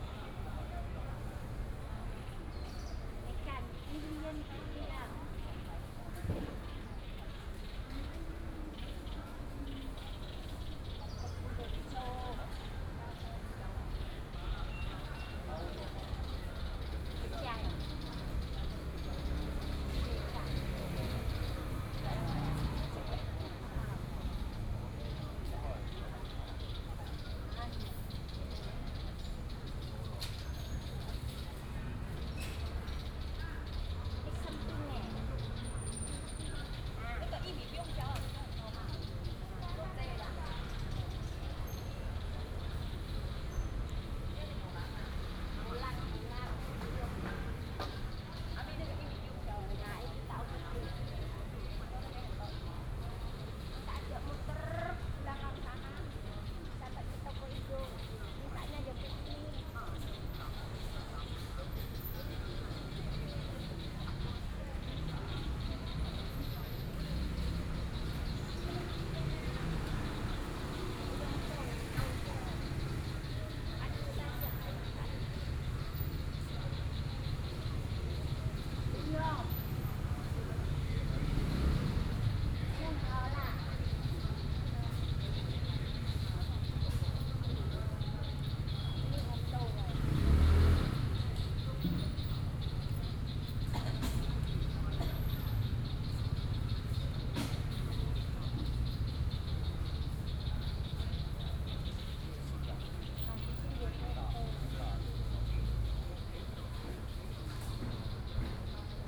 古風公園, Da’an Dist., Taipei City - Traditional markets and the park
Traditional markets and the park, Bird calls